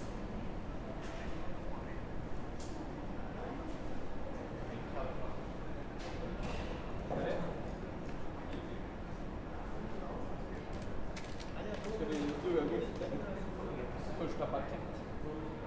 Frankfurt Airport (FRA), Frankfurt am Main, Deutschland - Airport of the Birds, Terminal 1, Hall B
During the Corona times there is not much traffic at the airport. The birds took over. Sometimes a crew crosses the hall, some passengers wait to drop their baggage, sometimes even with children. Or disabled people in wheel chairs are waiting for help, some talk to him, some noises in the background.